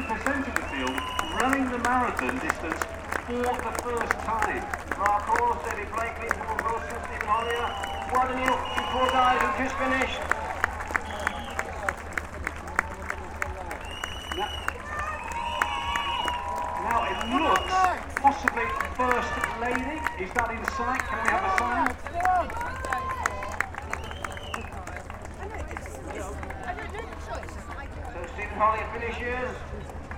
Brighton Marathon finishing line
The finishing line at the first Brighton Marathon. A slightly irritating event commentator!
18 April